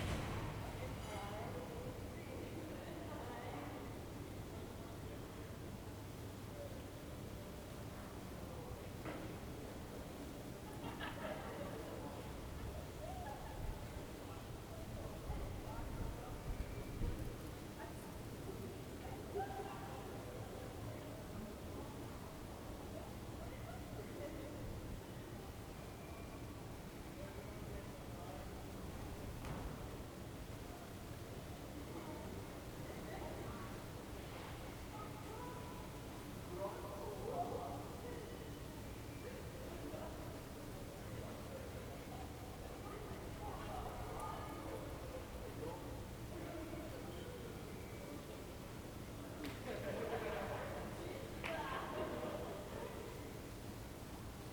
"Evening with storm, dog and rain in the time of COVID19" Soundscape
Chapter LXXVIî of Ascolto il tuo cuore, città. I listen to your heart, city
Friday May 15th 2020. Fixed position on an internal terrace at San Salvario district Turin, sixty six days after (but day twelve of Phase II) emergency disposition due to the epidemic of COVID19.
Start at 8:43 p.m. end at 9:20 p.m. duration of recording 36’53”
Piemonte, Italia, 15 May 2020